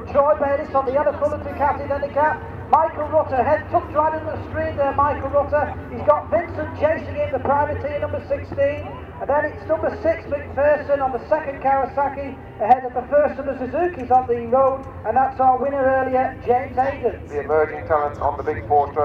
Silverstone Circuit, Towcester, UK - BSB 1998 ... Superbikes ... Race 2 ...
BSB 1998 ... Superbikes ... Race 2 ... commentary ... one point stereo mic to minidisk ... date correct ... time optional ...